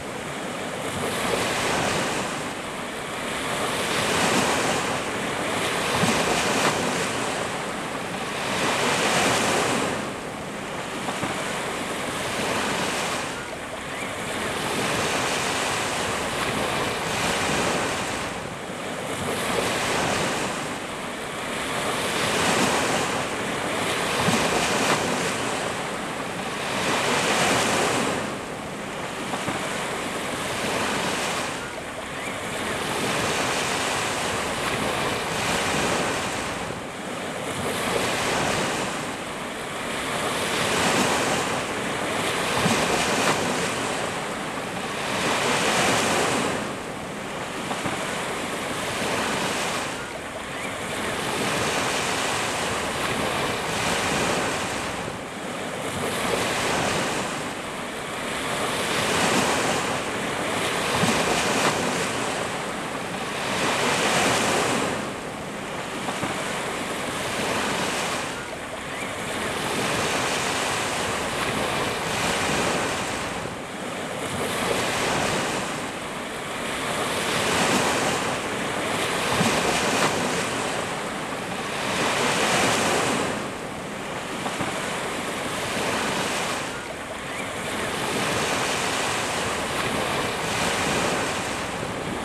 Livathou, Greece - waves on beach
Not the greatest recording but it will loop seamlessly.